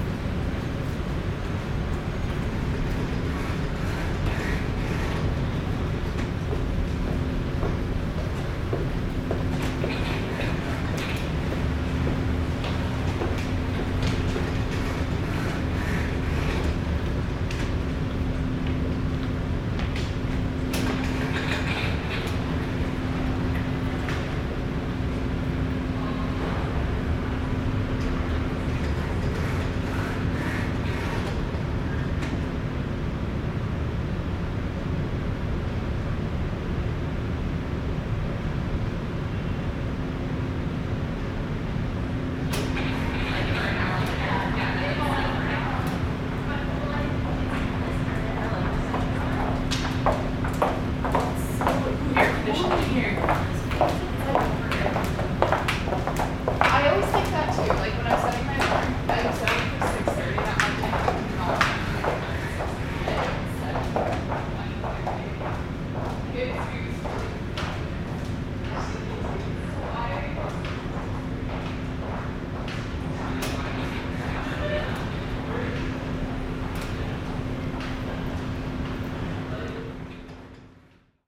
Calgary +15 Canterra bridge
sound of the bridge on the +15 walkway
Alberta, Canada